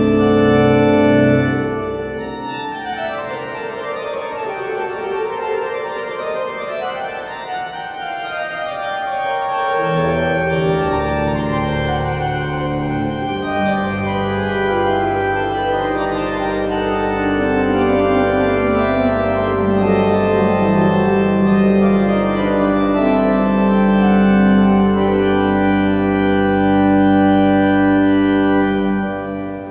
Centrum, Haarlem, Nederland - The Müller Organ
Two recordings made on Sunday July 12th 2015 in the Great Church, or Saint Bavo Church, in Haarlem.
Recorded with a Zoom H2. I could not prepare this recording and create a proper set-up; you might hear some noises in the 2nd piece caused by me moving the mic... but I liked the piece too much to turn this recording down.